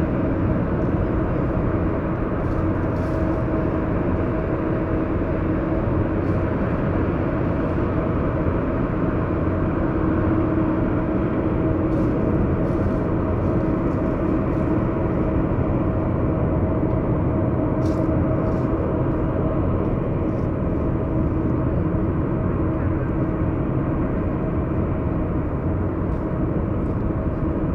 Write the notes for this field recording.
night drones from the sewage works